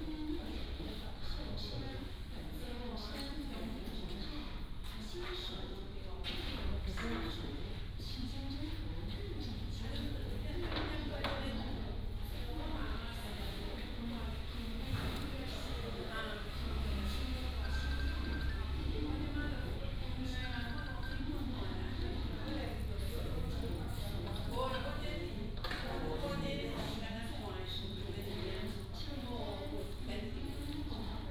In the lobby of the train station